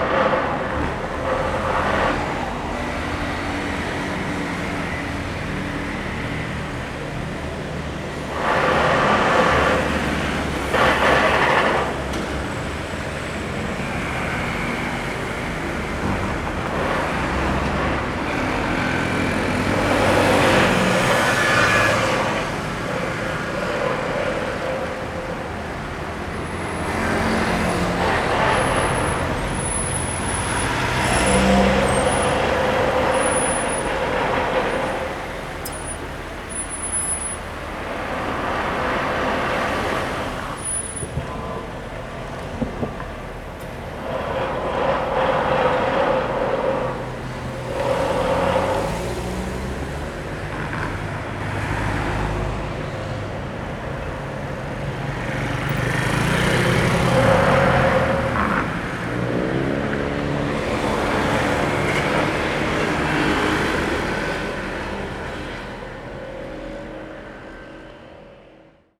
Da'an District, Taipei - Construction, traffic noise
Construction, traffic noise, Sony ECM-MS907, Sony Hi-MD MZ-RH1